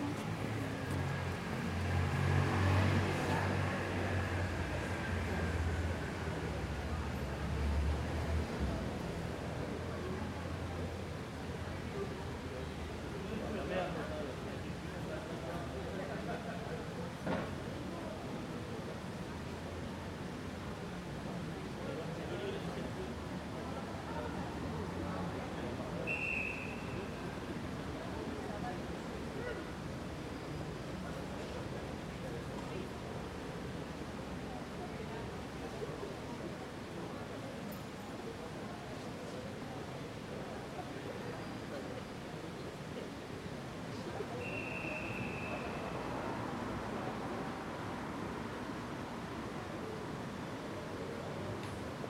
Pl. des Vosges, Paris, France - AMB PARIS EVENING PLACE DES VOSGES MS SCHOEPS MATRICED
This is a recording of the famous 'Place des Vosges' located in the 3th district in Paris. I used Schoeps MS microphones (CMC5 - MK4 - MK8) and a Sound Devices Mixpre6.
France métropolitaine, France